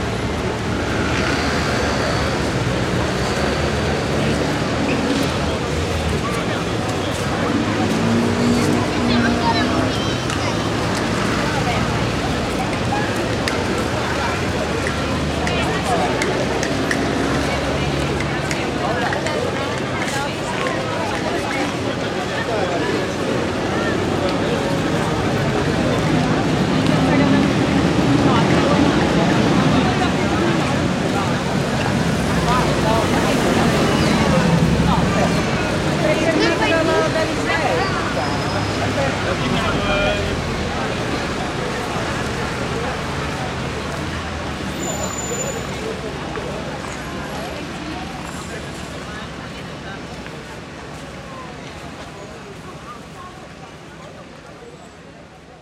barcelona, la rambla
street life on the famous ramblas on a friday morning in spring - dense street traffic and shopping pedestrinas
international city scapes - topographic field recording and social ambiences